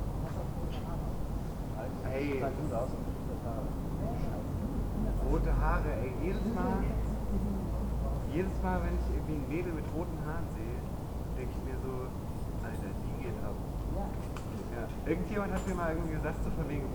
Berlin: Vermessungspunkt Friedelstraße / Maybachufer - Klangvermessung Kreuzkölln ::: 03.11.2011 ::: 02:30
Berlin, Germany